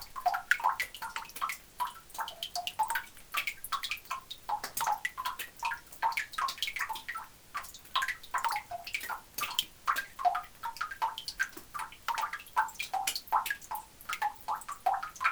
Drops falling onto the ground into an underground lignite mine. It's an especially dirty place as the coal is very dark and greasy.